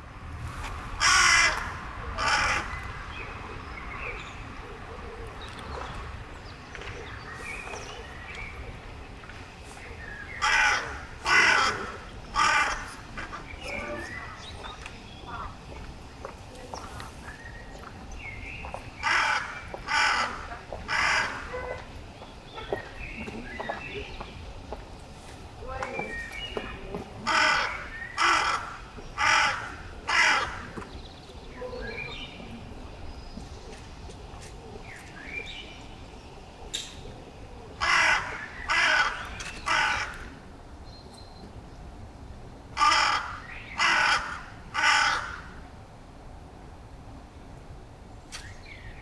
Quartier du Biéreau, Ottignies-Louvain-la-Neuve, Belgique - Lonely crow
Near a school, a lonely crow is calling because it's hungry.
Ottignies-Louvain-la-Neuve, Belgium